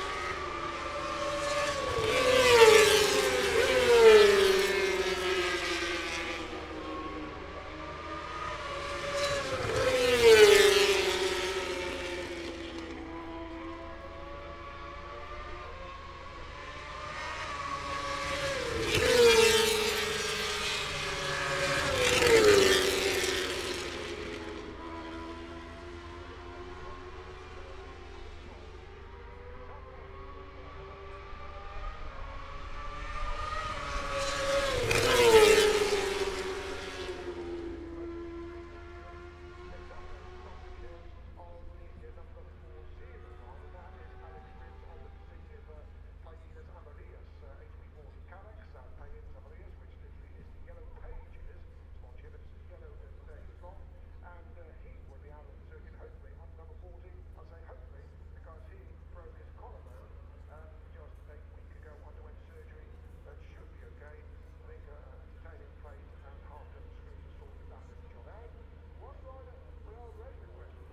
{"title": "Lillingstone Dayrell with Luffield Abbey, UK - British Motorcycle Grand Prix 2016 ... moto two ...", "date": "2016-09-02 10:50:00", "description": "moto two ... free practice one ... International Pit Straight ... Silverstone ... open lavalier mics on T bar ...", "latitude": "52.07", "longitude": "-1.02", "altitude": "149", "timezone": "Europe/London"}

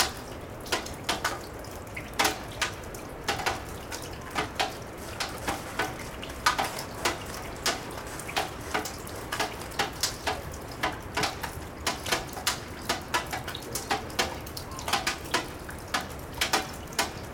{"title": "melting snow drips, Kopli Tallinn", "date": "2011-03-16 13:15:00", "description": "drips from the spring thaw among the ruins of wooden houses in Kopli", "latitude": "59.46", "longitude": "24.67", "altitude": "11", "timezone": "Europe/Tallinn"}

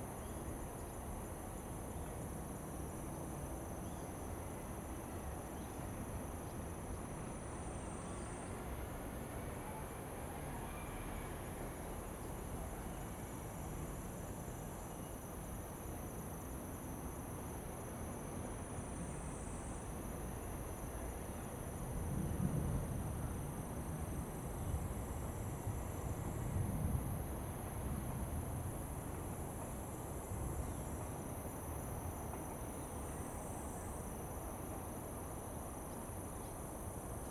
{"title": "和美山步道, 新店區, New Taipei City - In the woods", "date": "2015-07-28 15:31:00", "description": "In the woods, Thunder sound, Traffic Sound, birds sound\nZoom H2n MS+ XY", "latitude": "24.96", "longitude": "121.53", "altitude": "32", "timezone": "Asia/Taipei"}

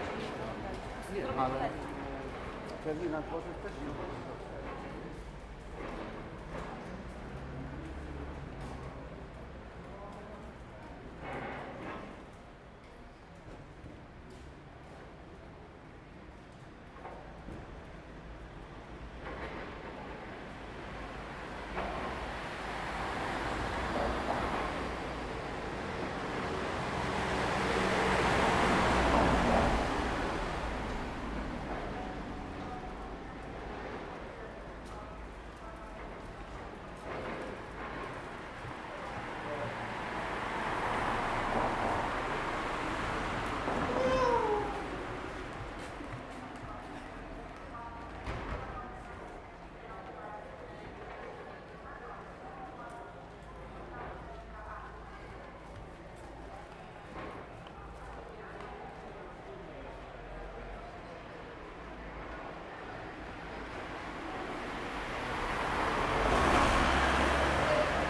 {"title": "Dolny Sopot, Sopot, Polska - Under the bridge", "date": "2013-08-30 14:20:00", "description": "Recorded under a train bridge in Sopot, Poland. You can hear the nearby construction and people walking by. Unfortunatelly, the only time a train rode by got a bit disturbed by a city bus. Recorded with Zoom H2N.", "latitude": "54.44", "longitude": "18.56", "altitude": "16", "timezone": "Europe/Warsaw"}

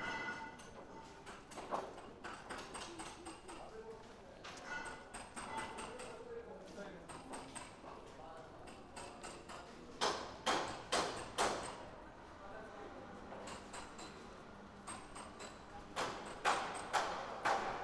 {"title": "Stare Miasto, Kraków, Poland - Reconstruction", "date": "2011-03-08 19:47:00", "description": "Sony PCM-D50, Wide", "latitude": "50.05", "longitude": "19.94", "altitude": "233", "timezone": "Europe/Warsaw"}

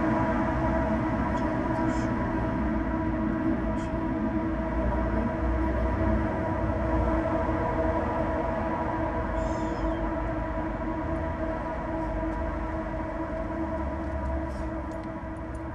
{"title": "Husitská, Prague - trains and traffic in a tube", "date": "2012-10-03 11:40:00", "description": "trains, street traffic and a gentle voice heard within a railing tube. recorded during the Sounds of Europe radio spaces workshop.\n(SD702, DPA4060)", "latitude": "50.09", "longitude": "14.44", "altitude": "211", "timezone": "Europe/Prague"}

